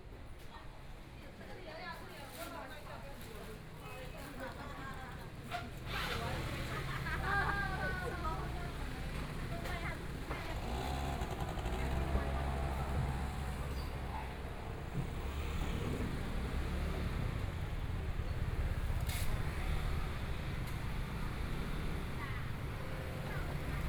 花蓮市民生里, Taiwan - soundwalk
Traffic Sound, Through the different streets, Walking into the street markets and shops
Binaural recordings
Zoom H4n+ Soundman OKM II
Hualian City, Hualien County, Taiwan